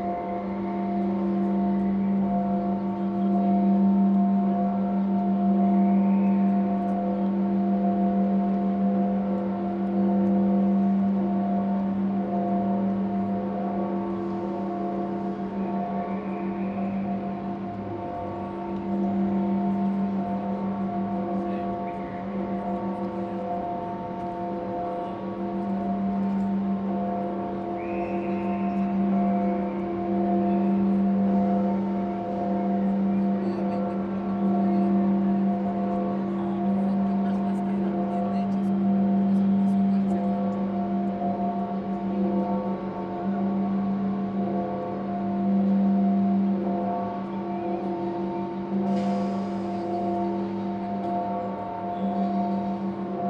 {"title": "Catedral Metropolitana, Ciudad de México, D.F., Mexico - Noontide Bells", "date": "2016-04-07 12:00:00", "description": "Recorded with a pair of DPA 4060s and a Marantz PMD661", "latitude": "19.43", "longitude": "-99.13", "altitude": "2241", "timezone": "America/Mexico_City"}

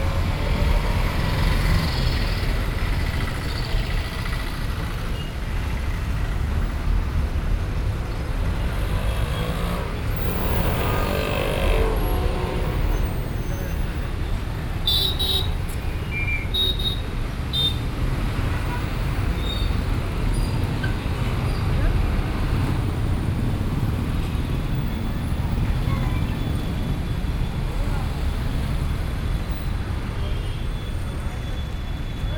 {"title": "Eranakulam, N Over Bridge", "date": "2009-11-13 16:46:00", "description": "India, Kerala, road traffic", "latitude": "9.99", "longitude": "76.29", "altitude": "6", "timezone": "Asia/Kolkata"}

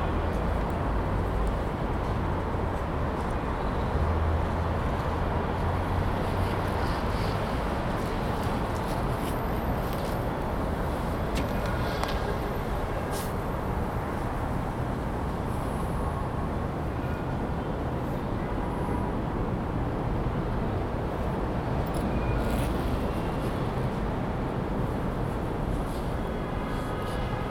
{"title": "وادي قدوم 77 - Damascus Gate\\Musrara", "date": "2021-11-14 03:04:00", "description": "Walking from Damascus Gate to Musrara", "latitude": "31.78", "longitude": "35.23", "altitude": "763", "timezone": "Asia/Hebron"}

